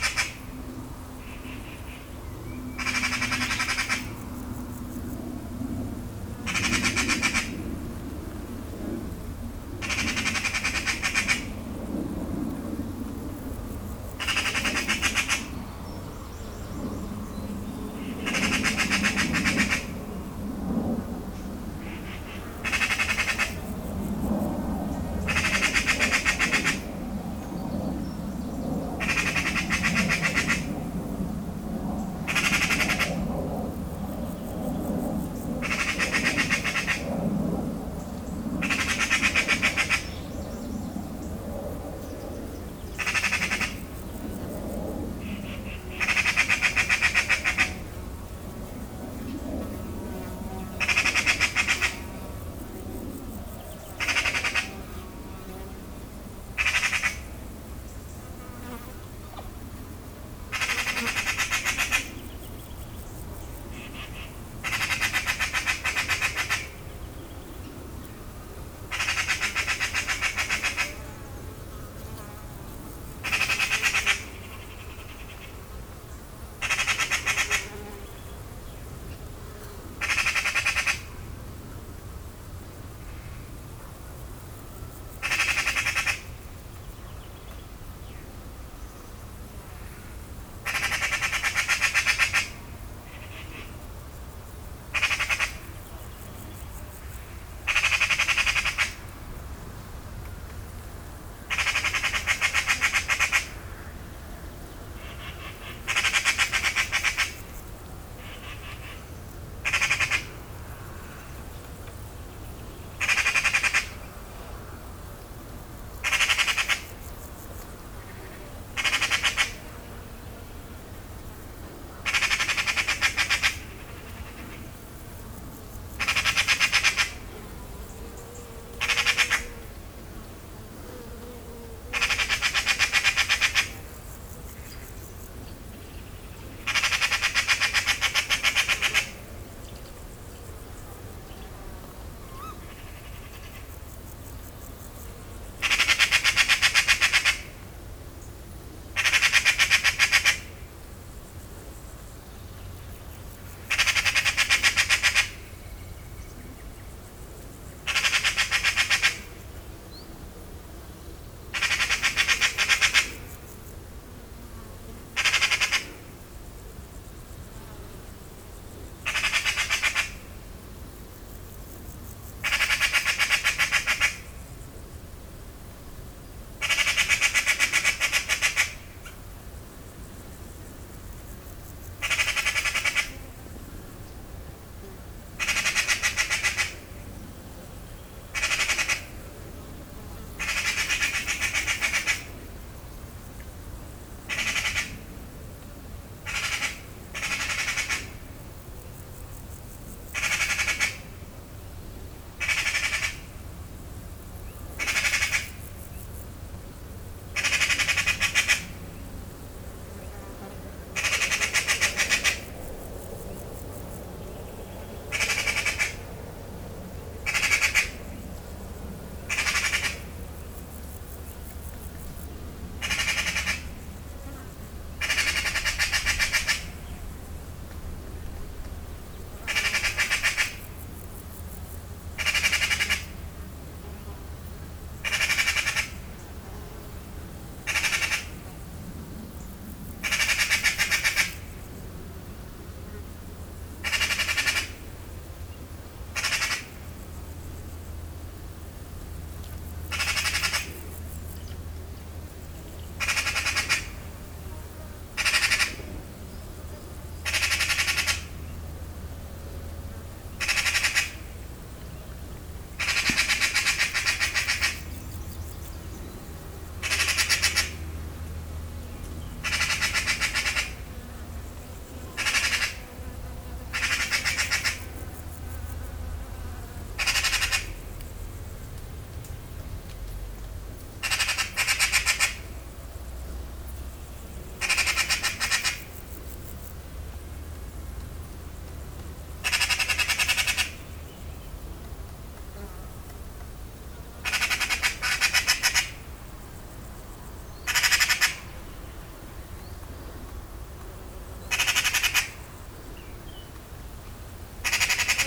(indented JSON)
{
  "title": "Saint-Martin-de-Nigelles, France - Anxious magpie",
  "date": "2018-07-19 10:50:00",
  "description": "Near the nest, an anxious magpie, because I stay here since ten minutes without moving.",
  "latitude": "48.62",
  "longitude": "1.60",
  "altitude": "130",
  "timezone": "Europe/Paris"
}